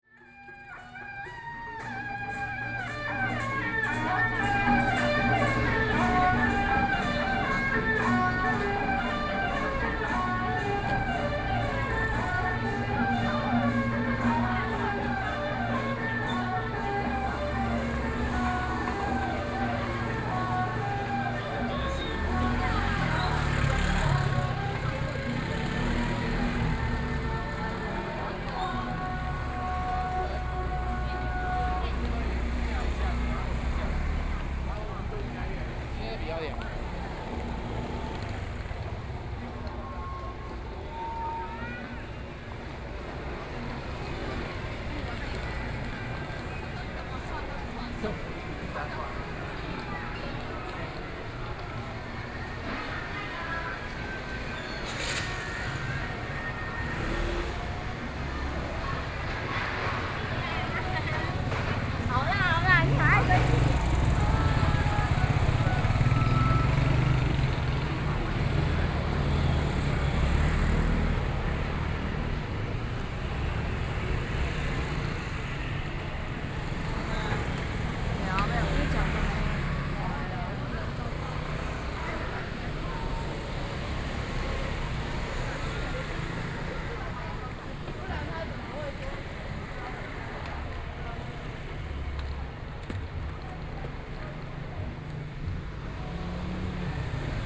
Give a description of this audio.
From Temple Square to the night market, After no business in traditional markets, Binaural recordings, Sony PCM D50 + Soundman OKM II